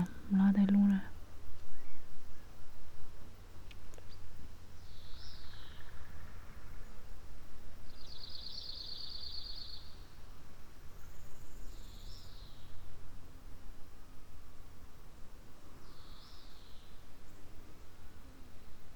quarry, Marušići, Croatia - void voices - stony chambers of exploitation - poems